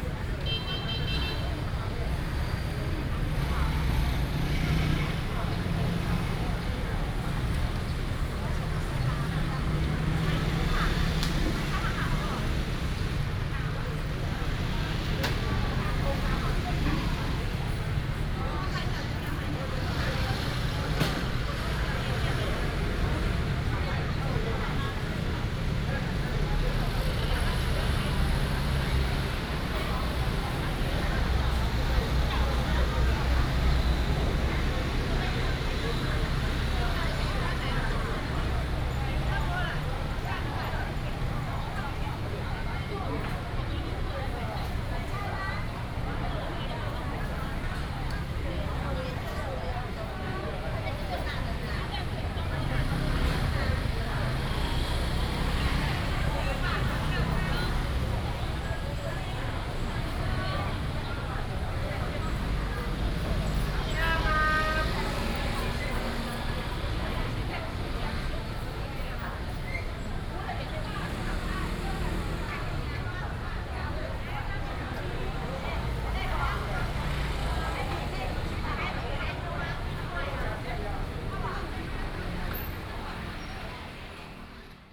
Ln., Guandong Rd., East Dist., Hsinchu City - Traditional market streets
Traditional market streets, Binaural recordings, Sony PCM D100+ Soundman OKM II
Hsinchu City, Taiwan, 12 September, ~09:00